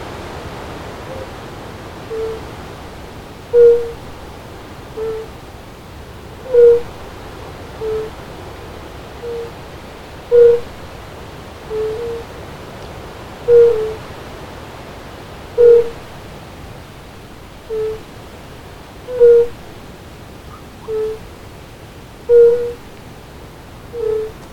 A couple or more fire-bellied toads (Bombina bombina) singing during a windy day. Recorded with Olympus LS-10.